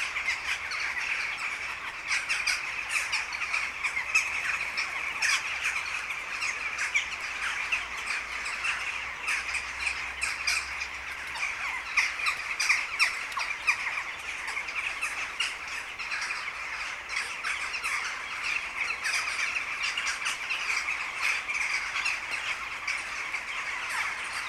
Lithuania, Utena, Crows in the evening
chattering city crows